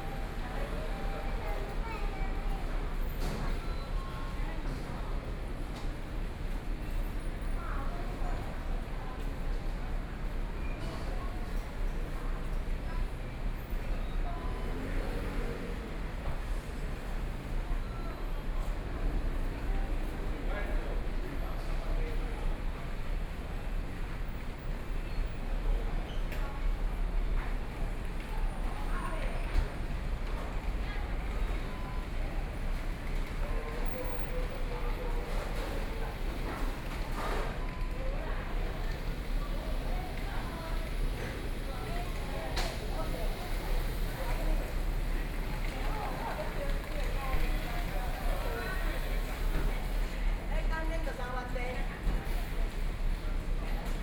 Taichung Station, Taiwan - soundwalk
Arrive at the station, After the underpass, Then out of the station, Zoom H4n+ Soundman OKM II
Zhong District, Taichung City, Taiwan, 8 October 2013, 10:57